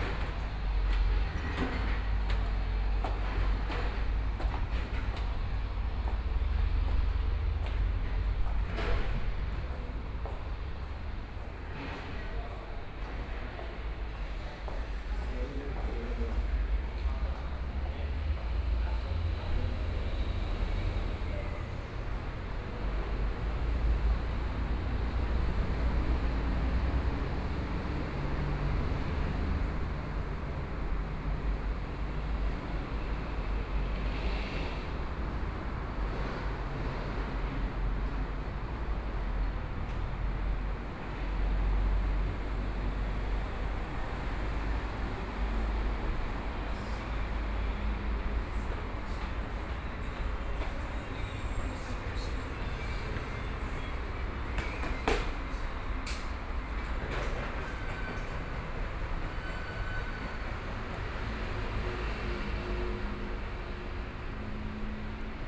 Walking from the station platform, Walking to the station exit direction
Zuoying District, Kaohsiung City, Taiwan, 15 May 2014, 10:48